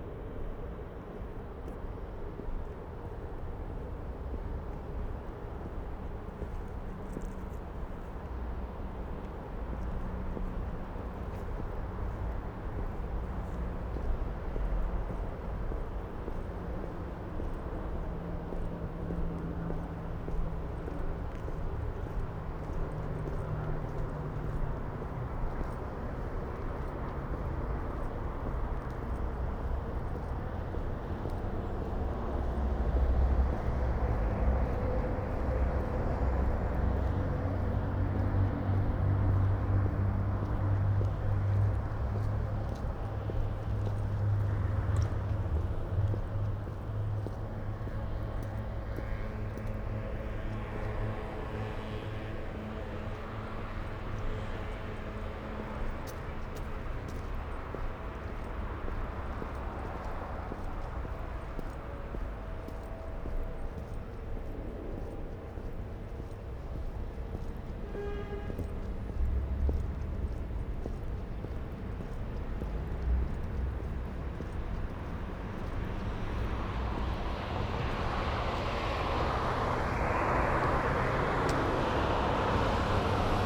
Maanplein, Binckhorst, Den Haag - KPN walk to road
walk from KPN office to Maanweg. Traffic. Soundfield Mic (ORTF decode from Bformat) Binckhorst Mapping Project